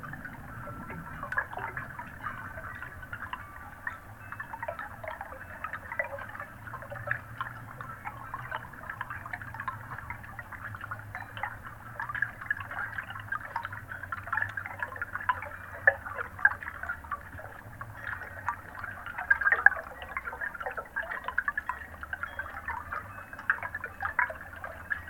not so deep in the pond - you can hear the chimes outside and steps of people on the bridge